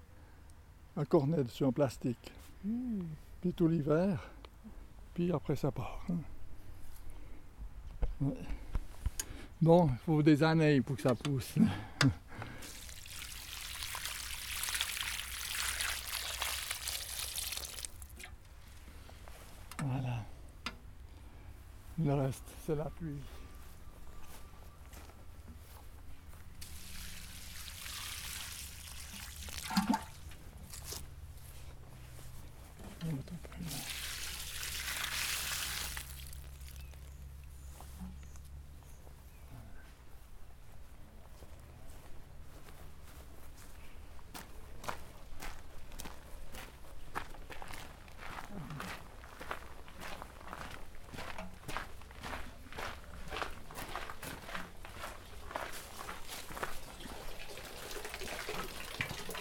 Espace culturel Assens, Baumpflege
französiche Gartenpflege im Welschland, Assens Espace culturel
Assens, Switzerland, 2 October, ~15:00